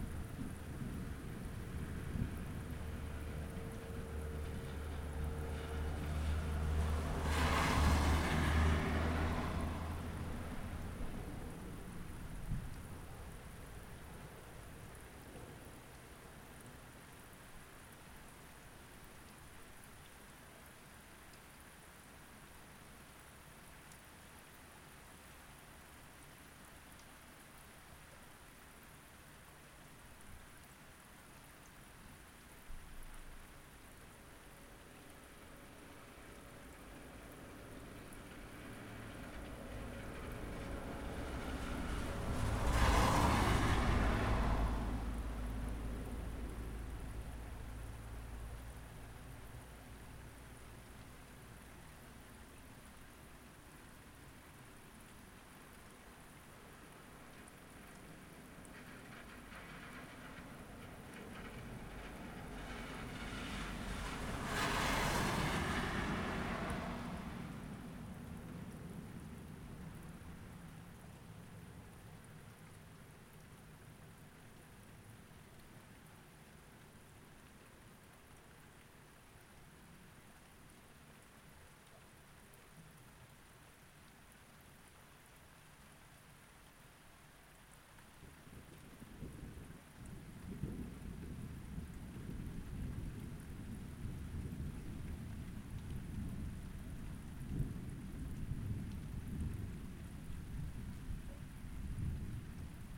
Unnamed Road, Malton, UK - passing thunderstorm ... passing geese ...
passing thunderstorm ... passing geese ... Olympus LS 12 integral mics ... balanced on window frame ... pink-footed geese very distant at 02.40 - 04.20 ... passing traffic etc ...